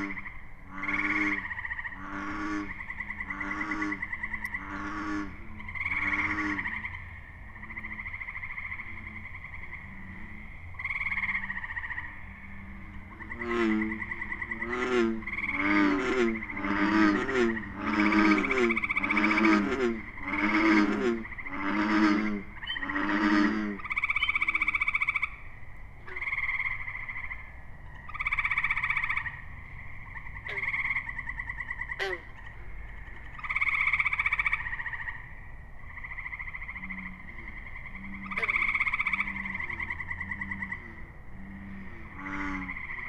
{"title": "Belleplain State Forest, Woodbine, NJ, USA - frog lek", "date": "2008-04-30 22:00:00", "description": "gray tree frogs, bullfrogs, green frogs and spring peepers recorded in a small pond located on the fringe of Belleplain State Forest. Fostex fr=2le with AT3032 mics", "latitude": "39.26", "longitude": "-74.90", "altitude": "12", "timezone": "America/New_York"}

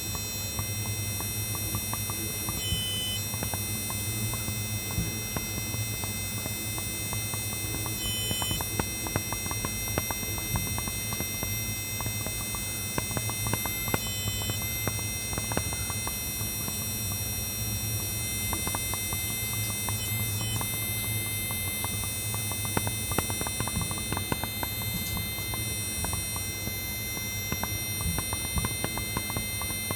{"title": "Poznan, Kochanowskiego street - lightbulb noise", "date": "2018-01-22 10:55:00", "description": "lightbulb making rather high pitched buzzing sound when turned on. Pitch of the buzz gets modulated somehow, I didn't influence it in any way. It's how this lightbulb sounds by itself. You can also hear sounds from the street and from nearby apartments. Repeating thump also appears but I can't remember were I came from, possibly wasn't aware of it at the time of the recording. As the lightbulb was attached very high I had to keep my hand straight up for a few minutes, thus the handling nose. but I think it only adds to the recording, making nice rhythmic composition in addition to the buzz. (sony d50)", "latitude": "52.41", "longitude": "16.91", "altitude": "74", "timezone": "Europe/Warsaw"}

